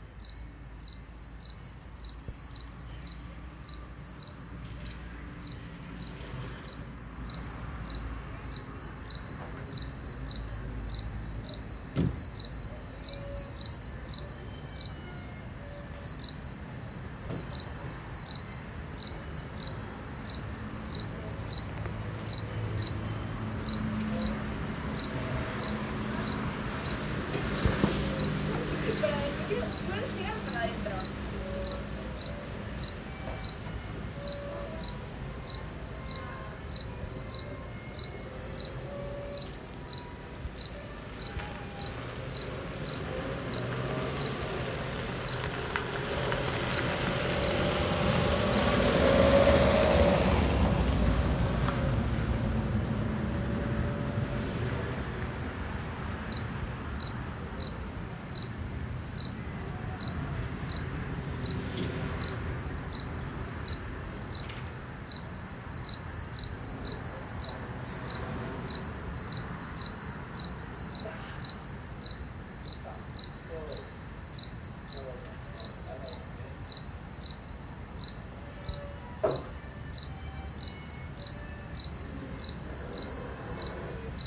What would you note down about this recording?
This take was made from inside the house, through the window. Crickets, voices, cars and a violin heard from about 40 meters.